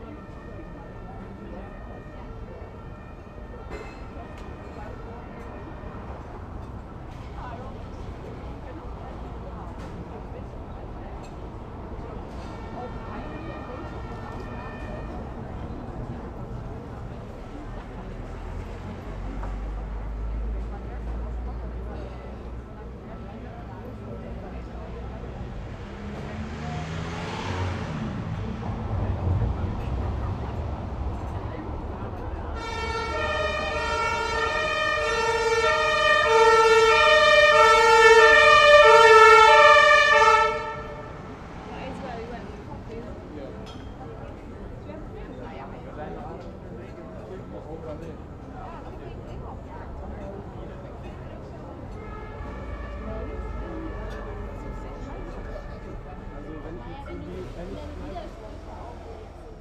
Berlin: Vermessungspunkt Friedelstraße / Maybachufer - Klangvermessung Kreuzkölln ::: 26.08.2011 ::: 21:27
Berlin, Germany